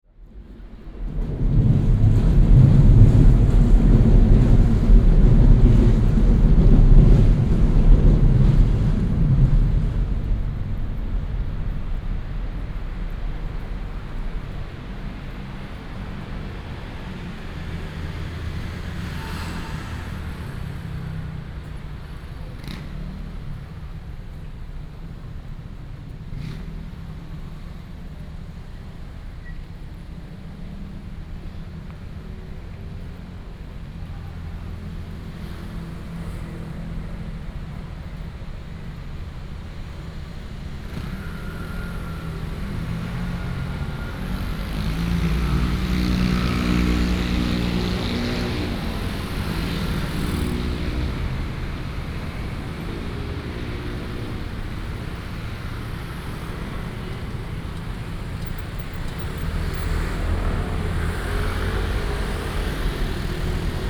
Sec., Beitou Rd., Beitou Dist. - Along the track below the walk
Along the track below the walk, traffic sound